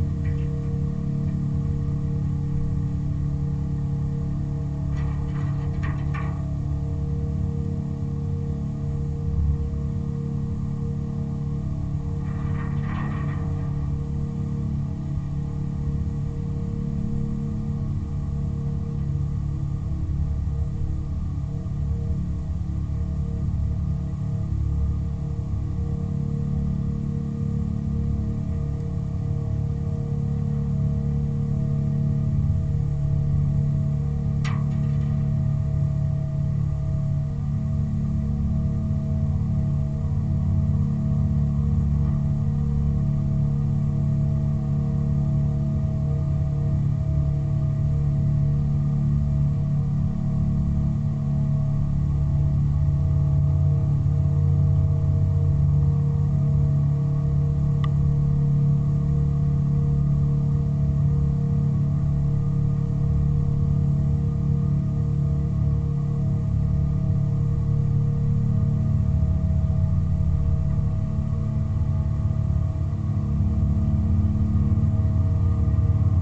Barge, Yeatman, Missouri, USA - Motorboat Barge Contact Mic
A barge from a gravel dredging operation sits partially buried in a gravel bar. The cavernous interior is covered by sheet metal. A contact mic is attached to the sheet metal and records low sounds from a very slow motorboat in the Meramec River. The harmonics of the sound change as the boat approaches and passes the barge. Random objects also fall on the barge.